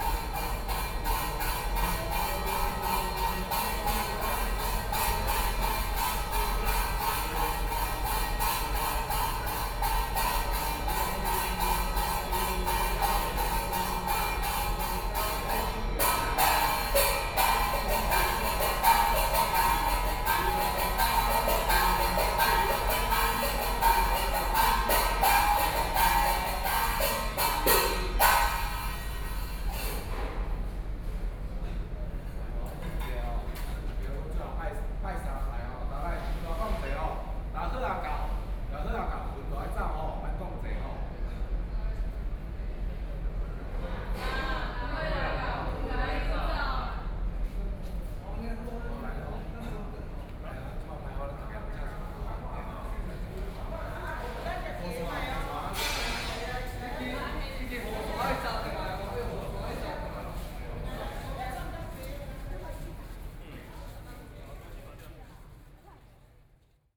Funeral, Taoist chanting, Zoom H4n+ Soundman OKM II
台中市, 中華民國